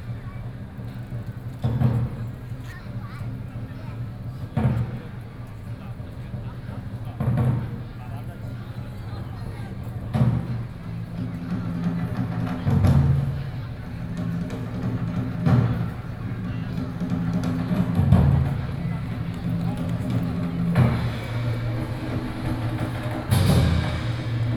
Taipei, Taiwan - Traditional drum performances
Shinkong Mitsukoshi, 信義A9平面停車場, 2 November 2012, ~20:00